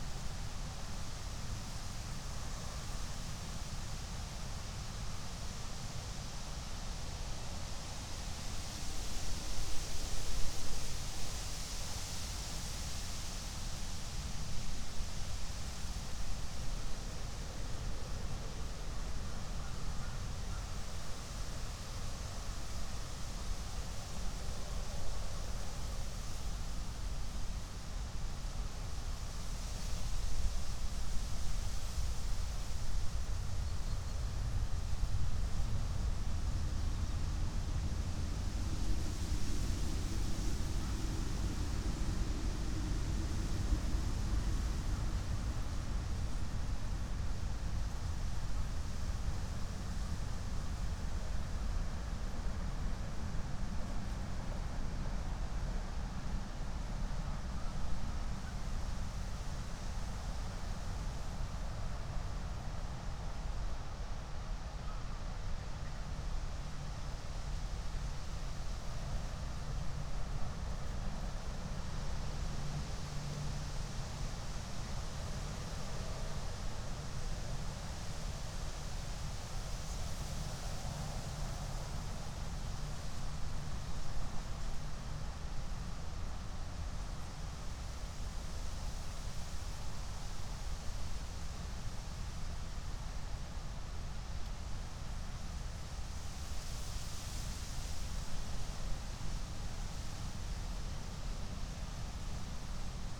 13:48 Berlin, Buch, Moorlinse - pond, wetland ambience